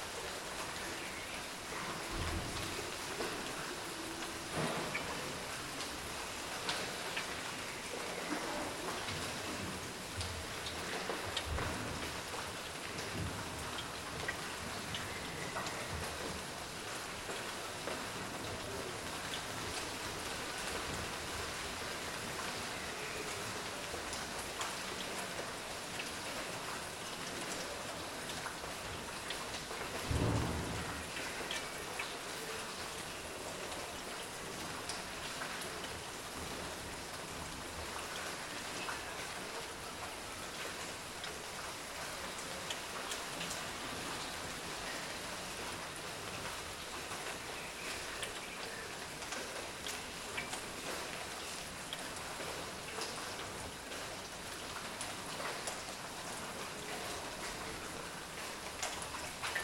Pillauer Str., Berlin, Germany - Light July Rain and Birds in Courtyard
Recorded out the window on the third floor facing into the courtyard.
The courtyard is approximately 100sqm and has a big tree in the middle.
Recorded with a Zoom H5.
12 July 2018, ~15:00